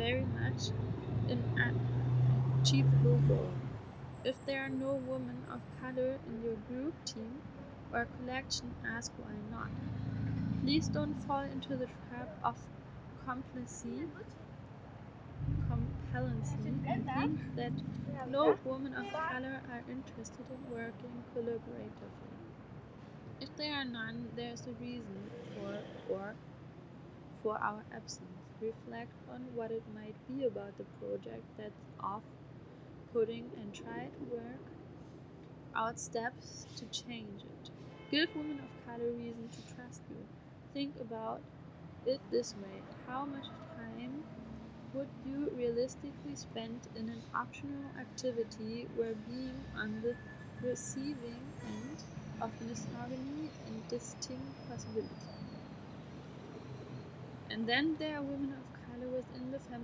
Tauentzienstraße, Berlin, Deutschland - Mobile Reading Room N°3 Postkoloniale Stadtgeschichte Berlins
The reading group Decol_IfKiK was distinguished by the fact that they read different narratives in certain places in Berlin, which for many represent an unknown connection with German colonialism. Places, houses, monuments receive new narratives for a more open discourse about our common colonial past.
at this site, the former News Agency for the Orient (NfO)
Shortly after the outbreak of the First World War in August 1914 was the following November, the founding of the News Office for the Orient (NfO) by the Foreign Office and the Politics Department in the Deputy General Staff. The stumbling block to founding the NfO was a proposal by the diplomat and archaeologist Max von Oppenheim. In order to weaken the enemy forces, especially the British and French, von Oppenheim proposed to stir up insurrection in the British and French colonies of the Near and Middle East.
Berlin, Germany, 10 November